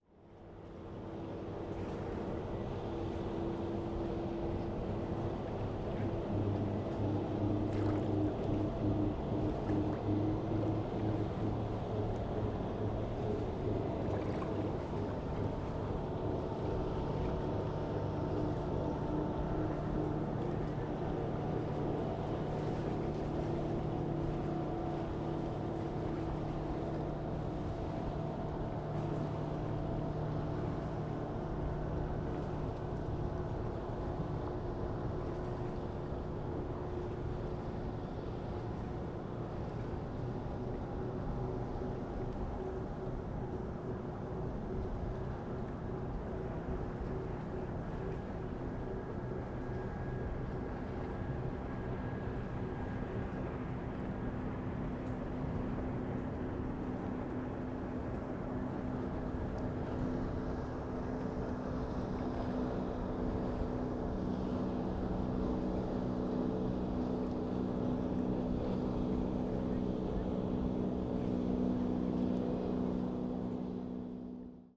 Leffis Key Preserve, Bradenton Beach, Florida, USA - Leffis Key Preserve
Medley of surf and passing motorboats.